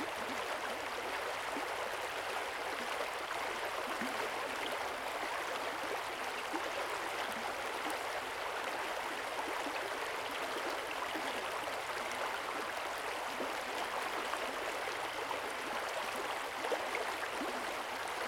Keifer Creek, Ballwin, Missouri, USA - Keifer Creek Riffle
Recording of a series of riffles in Keifer Creek. Also spelled Kiefer. Named for the Keefer family. It all sounds the same to us down in these hollers.